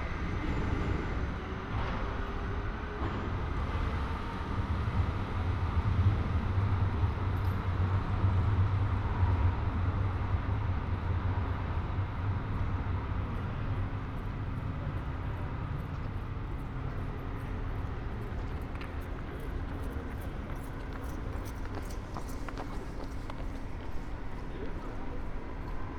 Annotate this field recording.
Sunday evening, a traffic triangle, almost no cars, but many trams passing-by, pedestrians in between. (Sony PCM D50, Primo EM172)